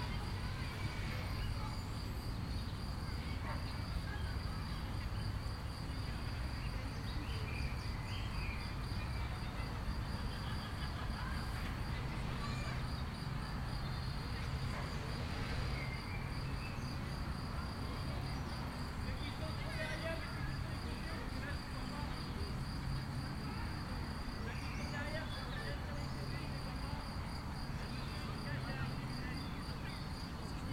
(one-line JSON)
{"title": "Meylan, France - Weddings photos in the park", "date": "2013-06-15 18:05:00", "description": "There were 3 Weddings in the same time in the same place for making photos.", "latitude": "45.21", "longitude": "5.78", "altitude": "240", "timezone": "Europe/Paris"}